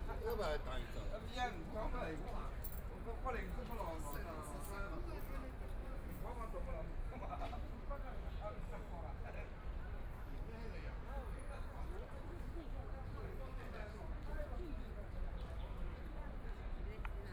Huangpu District, Shanghai - in the park
walking in the park, Binaural recording, Zoom H6+ Soundman OKM II
Huangpu, Shanghai, China